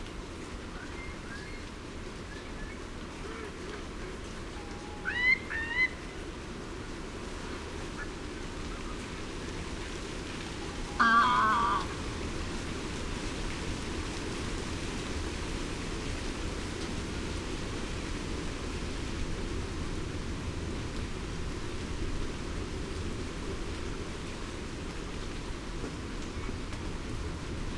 Isle of Mull, UK - wind ... rain ... curlews ... soundscape ...
Wind ... rain ... curlews ... soundscape ... Dervaig lochan ... parabolic on tripod ... bird calls from ... greylag goose ... mallard ... snipe ... greenshank ... redshank ... grey heron ... tawny owl ...